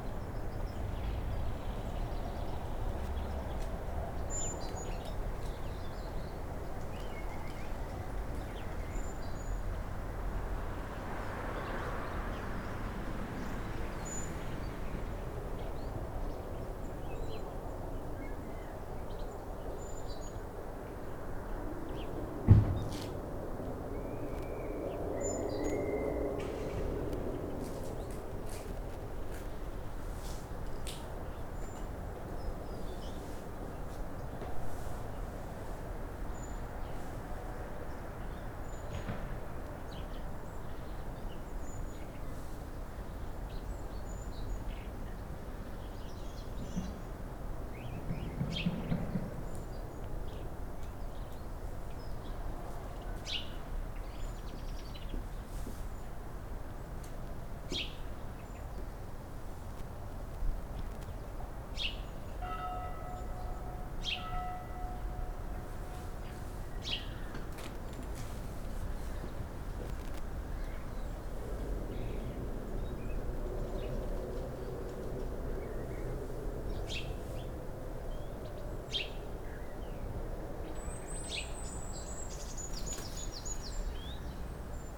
Soundfieldrecording aus dem Garten des soziokulturellen Zentrums "Villa Musenkuss" in Schkeuditz. Aufgenommen während eines Workshops zur Klangölologie am Nachmittag des 22.2.2018 mit Annabell, Marlen und Talitha. Zoom H4N + Røde NT5.
22 February 2018, 17:07